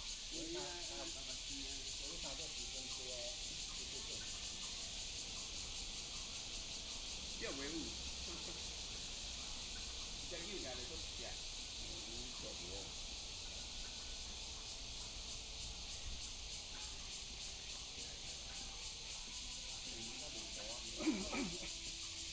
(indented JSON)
{
  "title": "Fuyang Eco Park, Da'an District, Taipei City - Morning in the park",
  "date": "2015-07-17 07:17:00",
  "description": "Morning in the park, Many older people in the park, Bird calls, Cicadas cry Frogs chirping",
  "latitude": "25.02",
  "longitude": "121.56",
  "altitude": "35",
  "timezone": "Asia/Taipei"
}